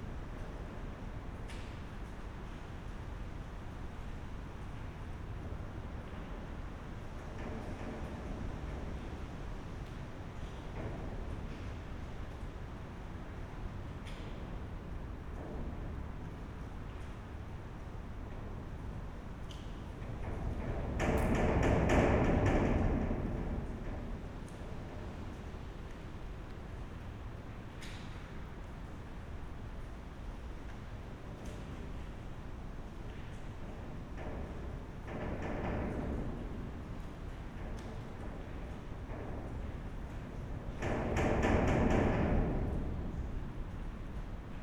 Punto Franco Nord, Trieste, Italy - wind moves iron gate
second try, a bit more distant.